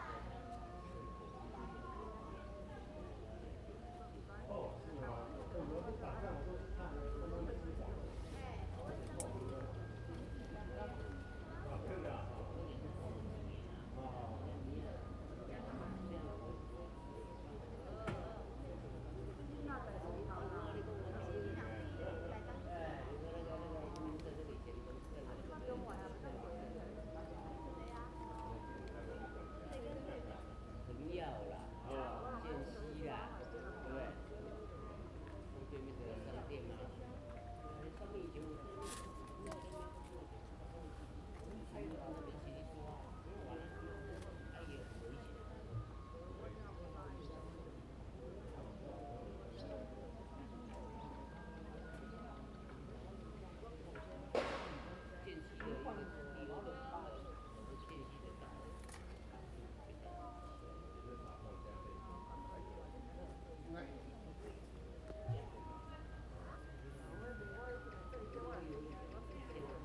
In the square in front of the station, Small village, Traffic Sound, Many people gathered in the evening outside the station square
Zoom H2n MS +XY
Taitung County, Taiwan, 5 September